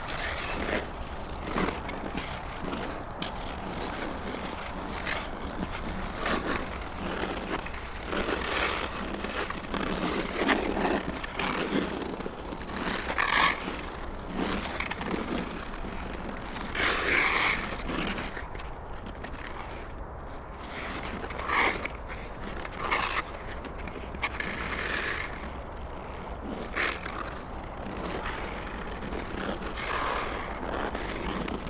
Ice skating from distance. Zoom H4n, AT835ST microphone.